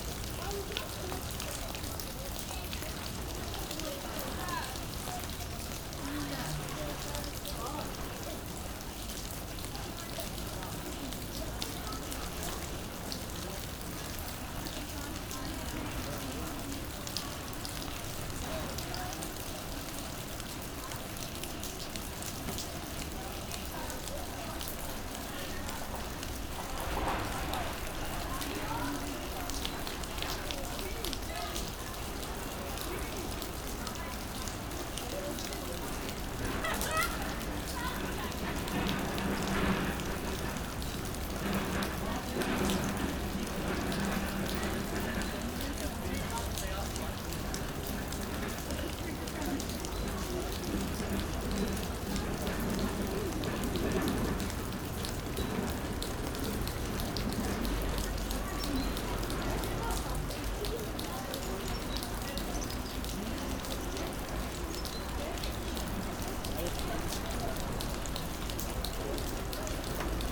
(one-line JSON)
{"title": "Gyumri, Arménie - Rain", "date": "2018-09-09 17:00:00", "description": "A quite strong rain, falling on a roof and after, seeping into the forest.", "latitude": "40.78", "longitude": "43.84", "altitude": "1535", "timezone": "Asia/Yerevan"}